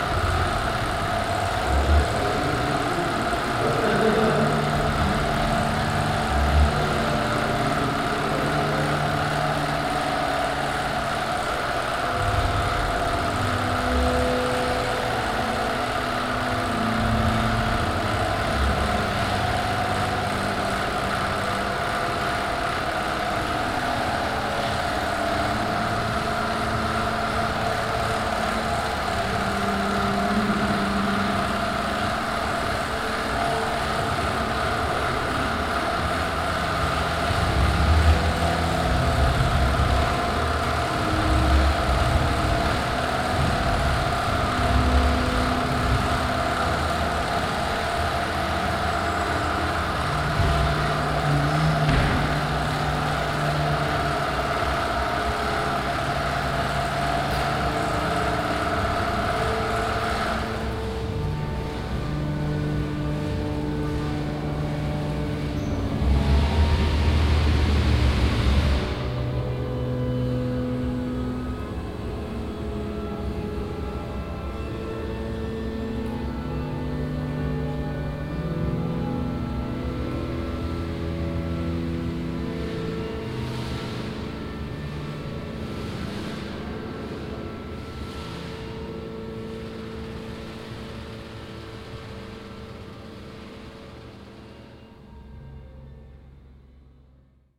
inside the museum - exhibition nam june paik award 2010.
installation of 3 16 mm movie projectors by artist Rosa Barba
soundmap d - social ambiences, art spaces and topographic field recordings